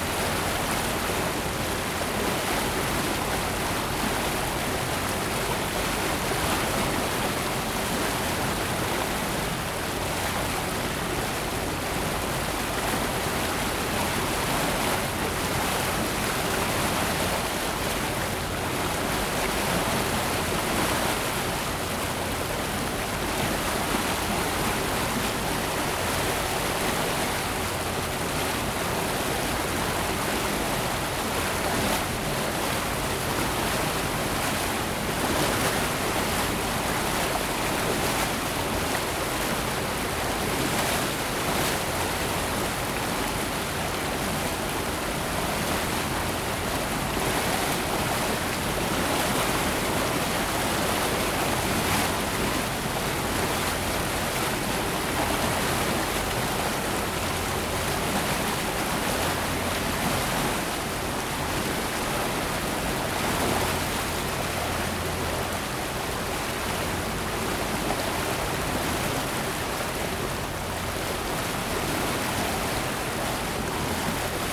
Boat trip on the Seine, from the deck of the "Aurora".
Paris, France, 17 August 2014, 12:30